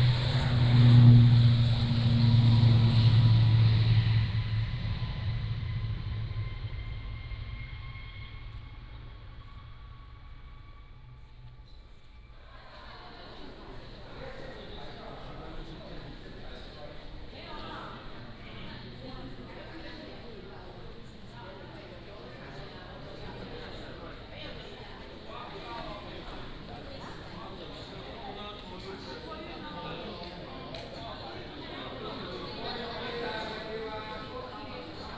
Nangan Airport, Taiwan - Walked into the airport lobby
Walked into the airport lobby
福建省 (Fujian), Mainland - Taiwan Border, 14 October 2014